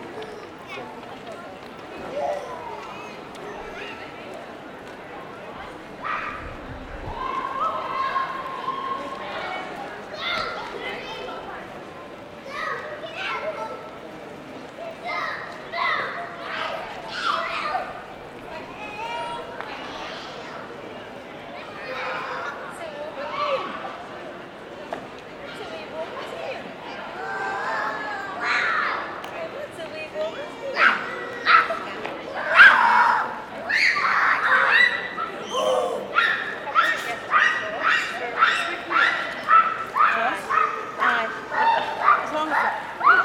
Arthur St, Belfast, UK - Arthur Square
Recording of kids yelling/playing/running around the sculpture, different groups chattering, birds flying around, a group of youths whistling and chanting, dog walking by.